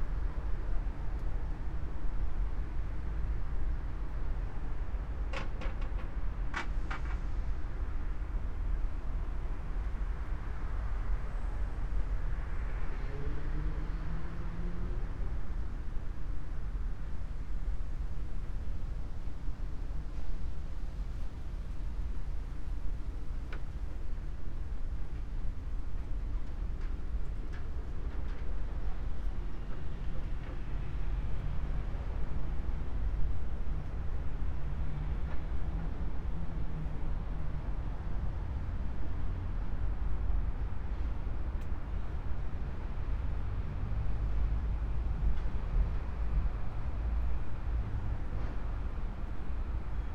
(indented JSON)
{
  "title": "cemetery, shirakawa, tokyo - wooden sticks, moved by wind",
  "date": "2013-11-10 16:59:00",
  "latitude": "35.68",
  "longitude": "139.80",
  "altitude": "6",
  "timezone": "Asia/Tokyo"
}